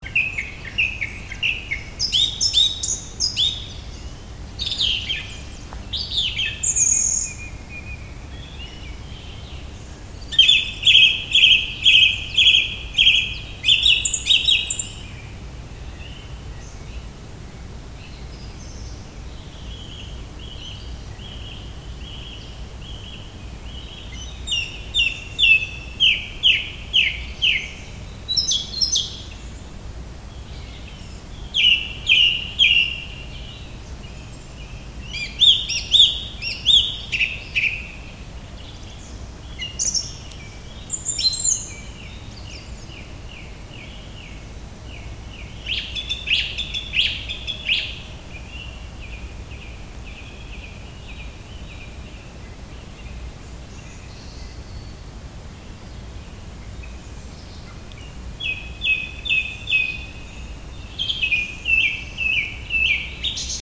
Smetanovy sady, Olomouc
early morning in Smetanovy sady